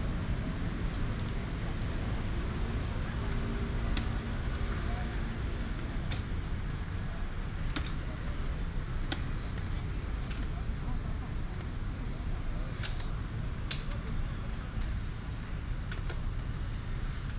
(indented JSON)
{
  "title": "東山街, East Dist., Hsinchu City - playing chess",
  "date": "2017-10-06 17:38:00",
  "description": "A lot of people playing chess, in the park, traffic sound, Binaural recordings, Sony PCM D100+ Soundman OKM II",
  "latitude": "24.80",
  "longitude": "120.98",
  "altitude": "36",
  "timezone": "Asia/Taipei"
}